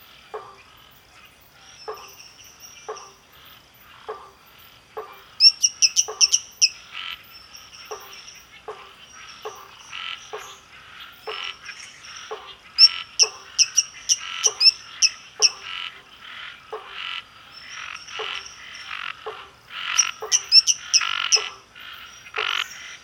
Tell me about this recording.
Recorded by an ORTF setup (Schoeps CCM4x2) on a Sound Devices Mixpre6, GPS: -22.392431, -44.553263, Sound Ref: BR-190812-07, Recorded during the Interativos 2019 organized by Silo